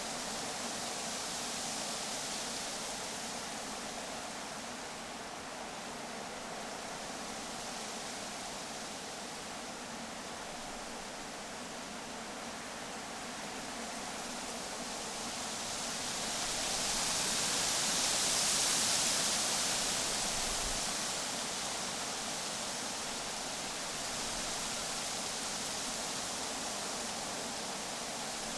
Lone Pine, CA, USA - Aspen and Cottonwood Trees Blowing in Wind
Metabolic Studio Sonic Division Archives:
Aspen and Cottonwood tree leaves rustling in the wind. Recorded in Cottonwood Canyon using H4N with to small lav microphones attached directly to the tree branches
CAL Fire Southern Region, California, United States, 24 August 2022, 17:00